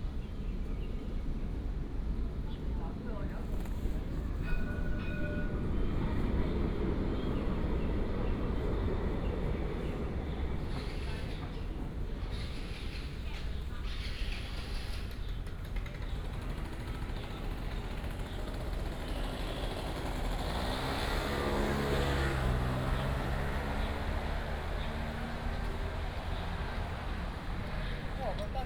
本東倉庫, Yancheng Dist., Kaohsiung City - Light rail tram running
Circular Line (KLRT), Traffic sound, birds sound, Tourists, light rail transit, Light rail tram running
Binaural recordings, Sony PCM D100+ Soundman OKM II